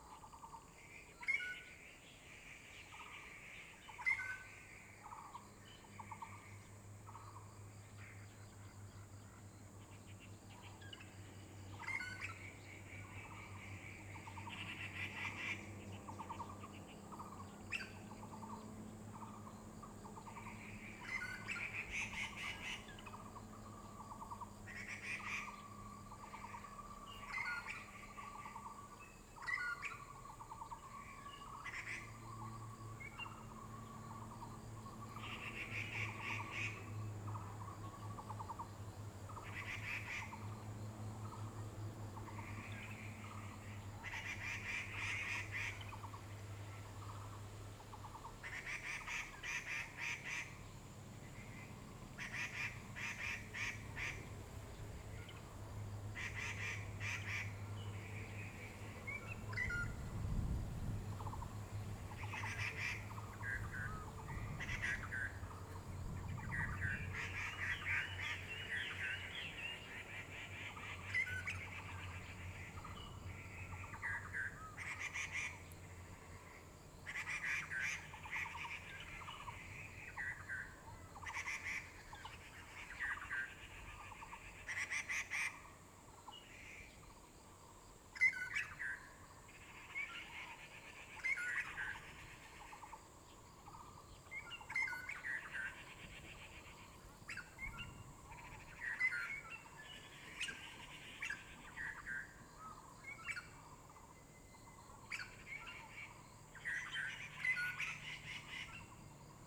大埤池, Dawu Township - Beside the pool
Beside the pool, Bird cry, traffic sound, Many kinds of bird calls
Zoom H2n MS+XY